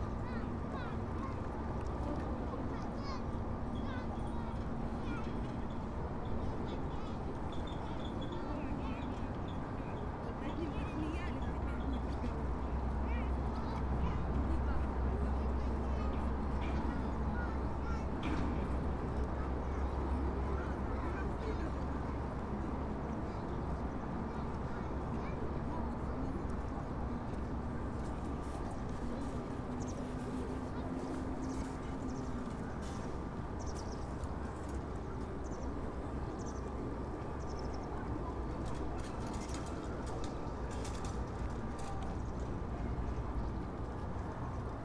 Речной вокзал, Барнаул, Алтайский край, Россия - River station
Barnaul river station on Ob river. Voices, ambience, announcements in Russian.
Altayskiy kray, Russia, June 2019